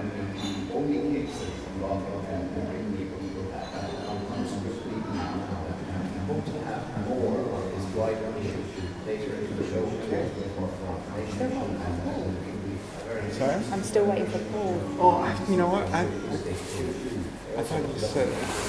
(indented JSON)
{
  "title": "Kilmurray Lodge, Castletroy, Co. Limerick, Ireland - Radio broadcast in Hotel Lobby",
  "date": "2014-03-24 09:00:00",
  "description": "I was staying in a hotal in Limerick for a conference and was waiting in the lobby for my colleague, Paul Whitty, when a local radio station turned up to broadcast from the lobby. There was music playing in the kitchen area, combined with the strange time delay of the broadcast being both produced live in the space, and running through the speakers. Another colleague turned up wearing an extremely rustly jacket. Someone texted me. A bricolage of crazy sounds at the start of a day of soundartpolemic: the noise of broadcast and reception, piped music, cutlery being tidied away, people fidgeting, phones going off, and waiting.",
  "latitude": "52.67",
  "longitude": "-8.55",
  "altitude": "27",
  "timezone": "Europe/Dublin"
}